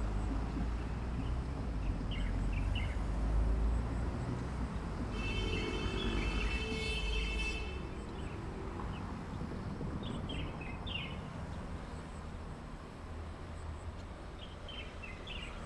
Sec., Zhongxing Rd., Wujie Township - At railroad crossing
At railroad crossing, Traffic Sound, Trains traveling through
Zoom H6 MS+ Rode NT4
Yilan County, Taiwan